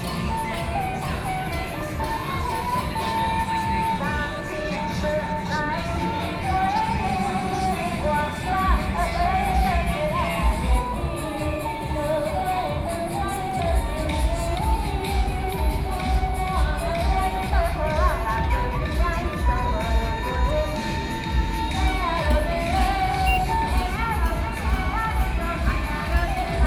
Xinmin Rd., 宜蘭市東門里 - Festival
Festival, Traffic Sound, At the roadside
Sony PCM D50+ Soundman OKM II